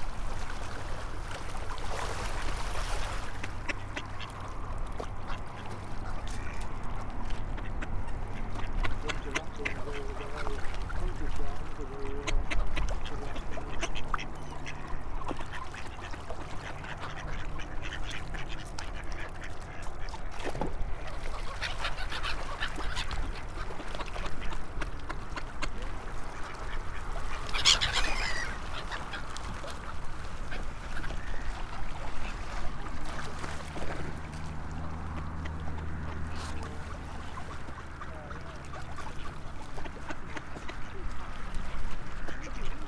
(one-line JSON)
{"title": "Lodmoor, Weymouth, Dorset, UK - feeding the birds", "date": "2013-01-13 15:14:00", "latitude": "50.63", "longitude": "-2.44", "altitude": "1", "timezone": "Europe/London"}